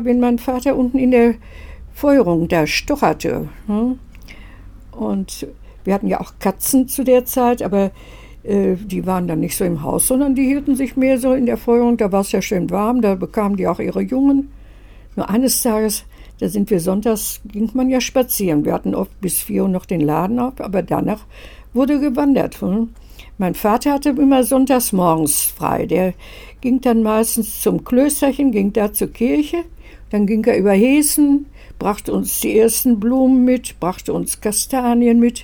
Josef Str, Hamm, Germany - Mein Fensterplatz...
Irmgard Fatheuer sits with us at her favorite window place overlooking the huge trees in the garden behind the church. Irmgard was born in this house in 1926 and has lived here ever since. Listening to the birds and the wind in the trees, she tells us about the sounds she can still hear in her memory, like her father working in the bakery downstairs… One sound features strongly, and comes in live… (it’s the traditional call for the prayer called “Angulus” in the Catholic Church; it rings at 7am, 12 noon and 7 pm)
Wir sitzen mit Irmgard Fatheuer an ihrem Fensterplatz und blicken in die grossen Bäume des Kirchgartens. Irmgard ist 1926 in diesem Haus geboren… Geräusche aus der Erinnerung mischen sich ins Jetzt. Es gibt unendlich viel zu erzählen…
recordings and more info: